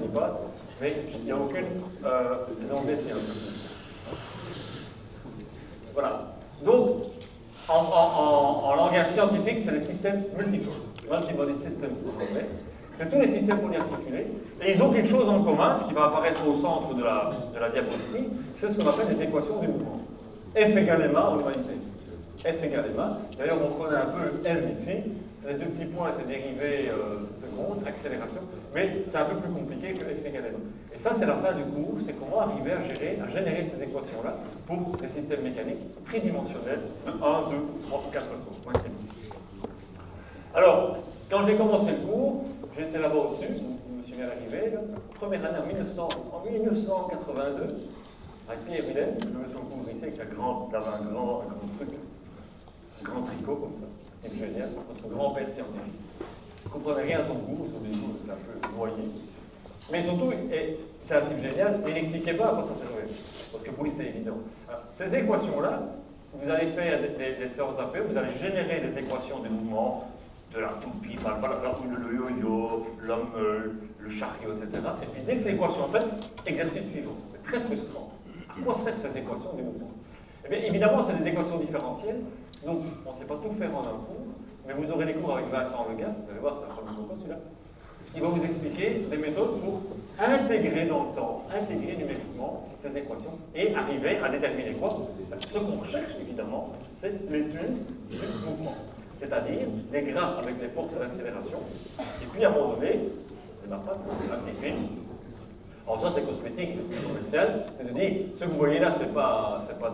Quartier du Biéreau, Ottignies-Louvain-la-Neuve, Belgique - A course of mechanic
A course of mechanic, in the huge auditoire called Croix du Sud.
Ottignies-Louvain-la-Neuve, Belgium, 11 March 2016